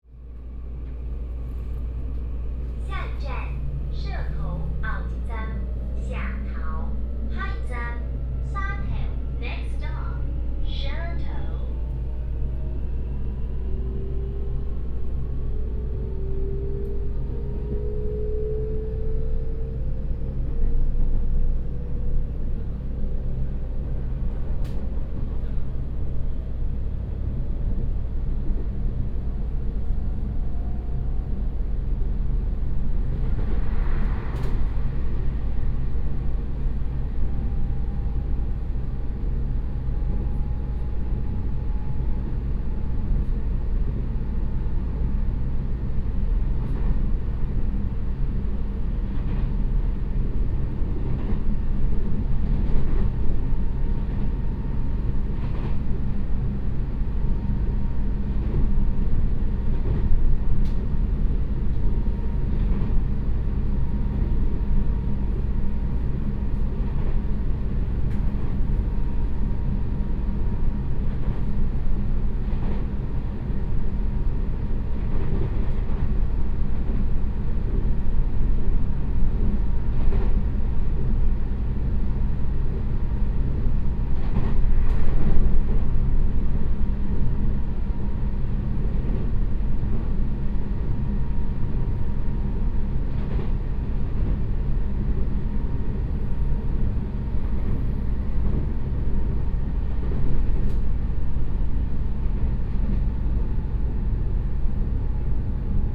Shetou Township, Changhua County - Train compartment
In a railway carriage, from Yongjing Station to Shetou Station